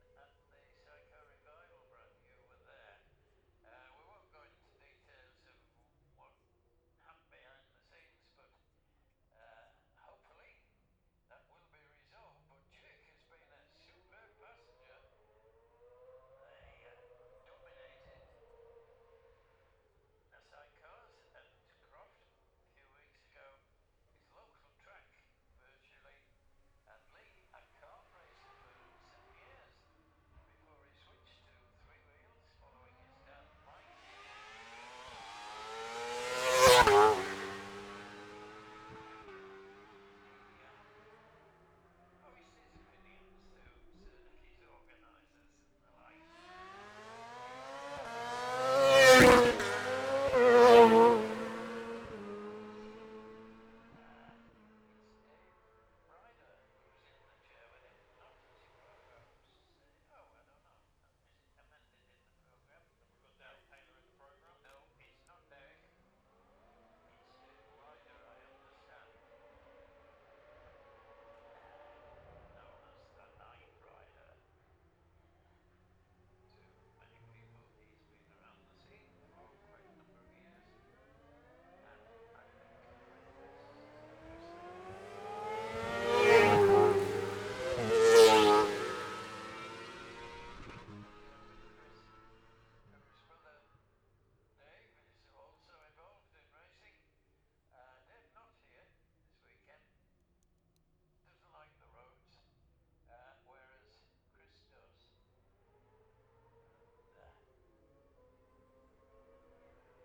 Jacksons Ln, Scarborough, UK - gold cup 2022 ... sidecar practice ...
the steve henshaw gold cup 2022 ... sidecar practice ... dpa 4060s on t-bar on tripod to zoom f6 ...
16 September 2022, ~10:00